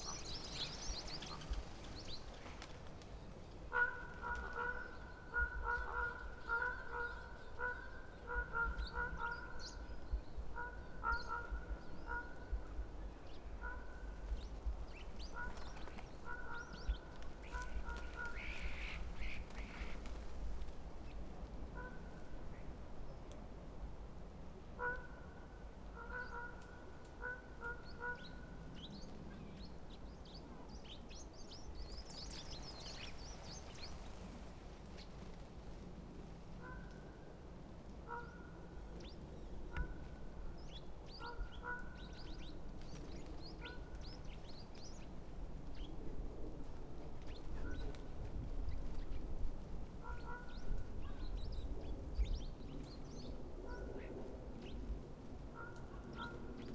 Suchy Las, road surrounding the landfill site - sparrow meeting
came across a bush with hundreds of sparrows seating and chirpping away on it. they moment they noticed me they went silent. only a few squeaks where to hear and gurgle of ravens reverberated in the forest.
3 February, ~15:00